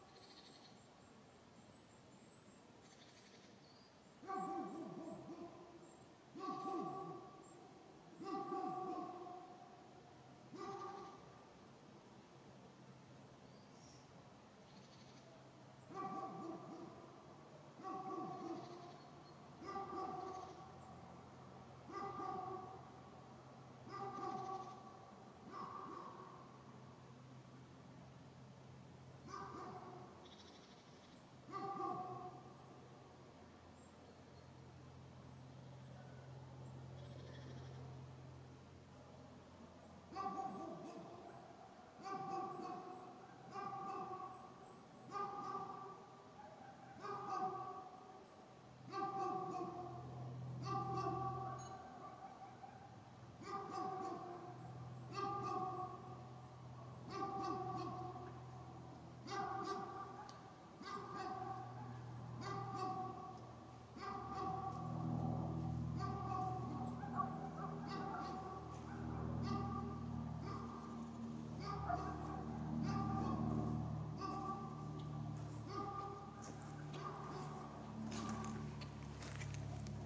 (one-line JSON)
{"title": "Lithuania, Kulionys, evening", "date": "2010-10-09 18:43:00", "description": "village amongst the woods, sacred place, dogs", "latitude": "55.31", "longitude": "25.56", "altitude": "163", "timezone": "Europe/Vilnius"}